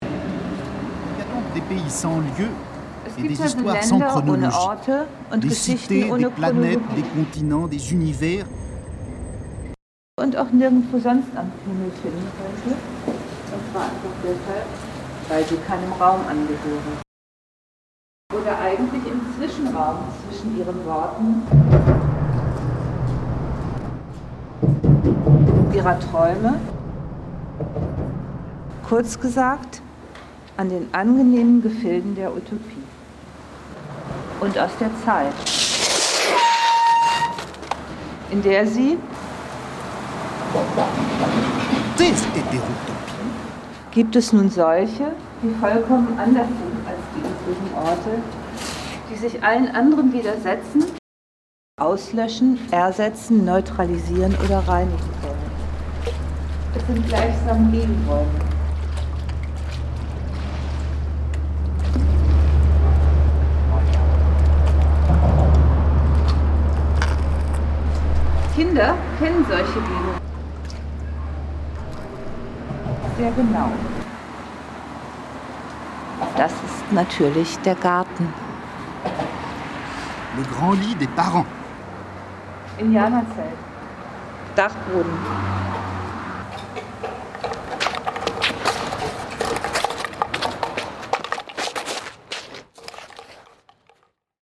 Ellerholzbrücken - Gegenort
Agentin 006, Agentur für Identität; Erster Versuch.
Hamburg, Germany, October 2009